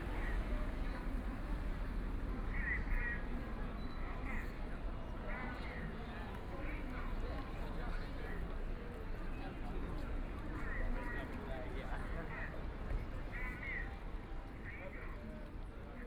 Shanghai Railway Station - In the train station plaza
In the train station plaza, Store ads sound, Traffic Sound, The crowd, Binaural recording, Zoom H6+ Soundman OKM II
Shanghai, China, 23 November 2013